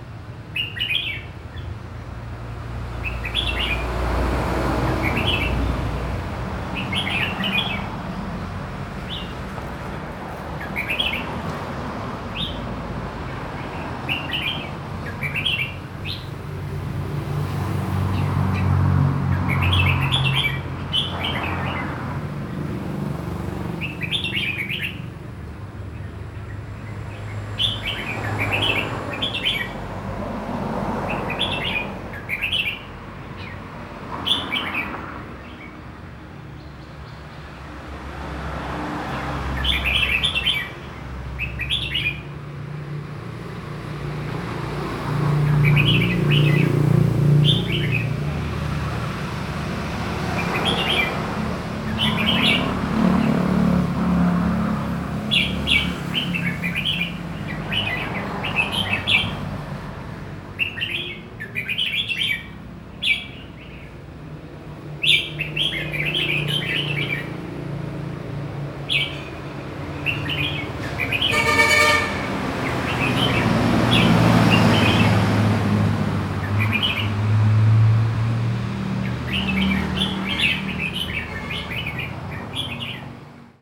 Koh Samui, birds in a courtyard
Koh Samui, oiseaux en cage dans une cour intérieure.